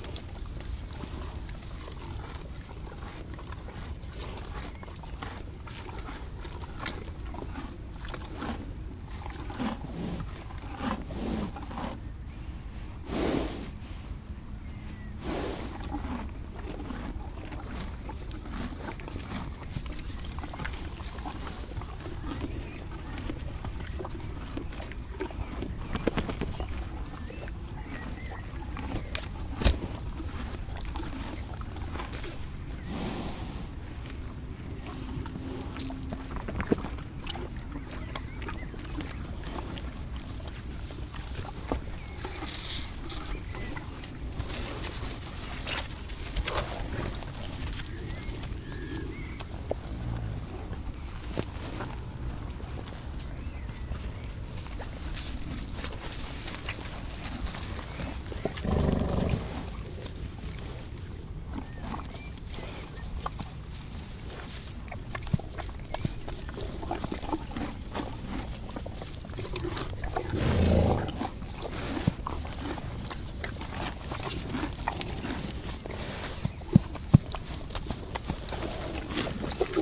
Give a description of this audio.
In the all-animals-eating collection, this time is the horses turn. We are here in a pleasant landscape. Horses are slowly eating grass. I come with sweet young green grass and I give it to them. A studhorse is particularly agressive, he chases the others. Regularly, this studhorse sniffs me, and looks me as an intruder. At the end, he fights another horse.